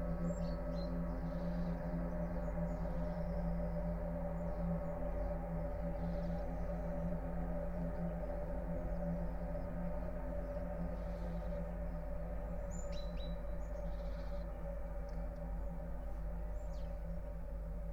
Pod Lipą, Borsuki, Poland - (835c AB) birds and approaching engine
Recording of birds, some approaching engine (not sure was it a car or maybe a plane), and an unknown machine pitch.
Recorded in AB stereo (17cm wide) with Sennheiser MKH8020 on Sound Devices MixPre6-II
województwo mazowieckie, Polska, 21 August 2021